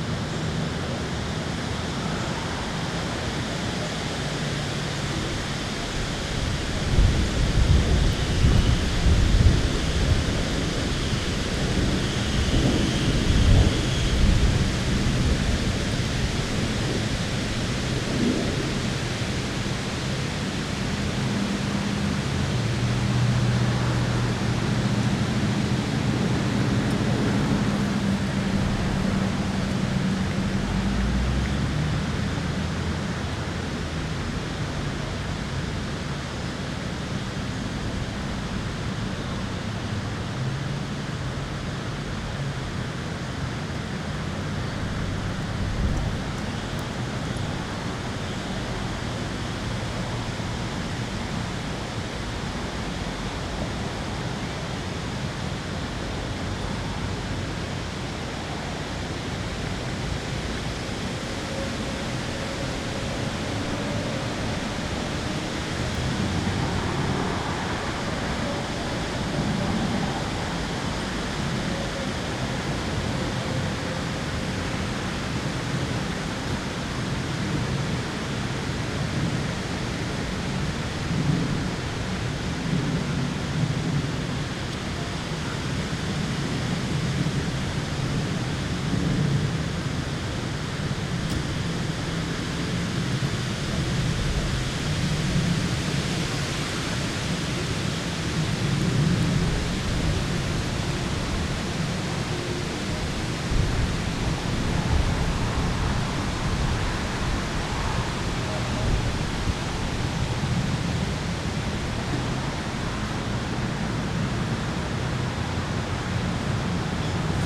A windy day at Milford Park off of Hicks Road. There was hardly anyone there, so the majority of the sounds come from the wind in the trees and human activity from the surrounding area. Birds can also be heard. This is an intact section of the full recording, which suffered from microphone overload due to strong wind gusts. This audio was captured from the top of the car.
[Tascam Dr-100mkiii & Primo EM-272 omni mics]